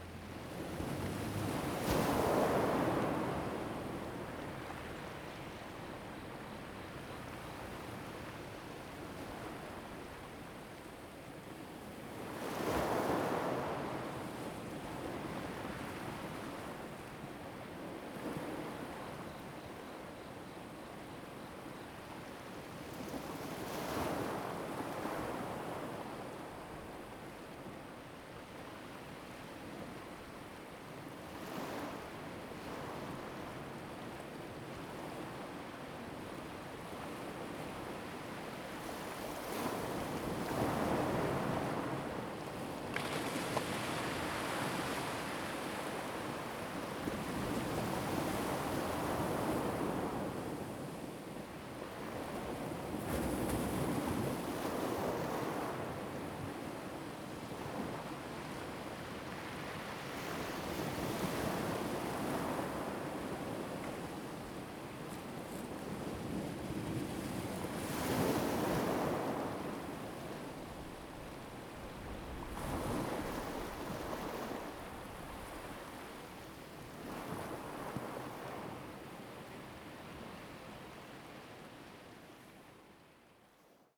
Jiayo, Koto island - sound of the waves
At the beach, sound of the waves
Zoom H2n MS +XY